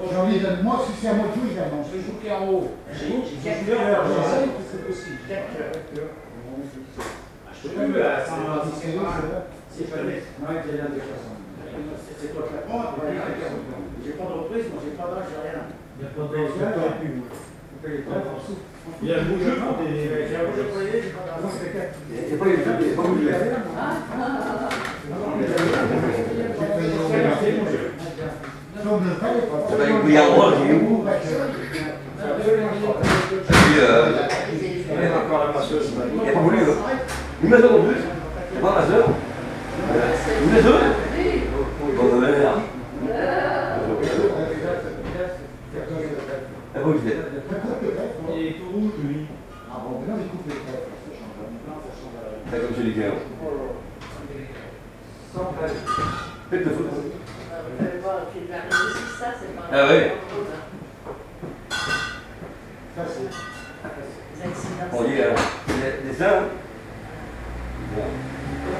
Lyon, Rue Hippolyte Flandrin, Aux Armes de Savoie, minidisc recording from 1999.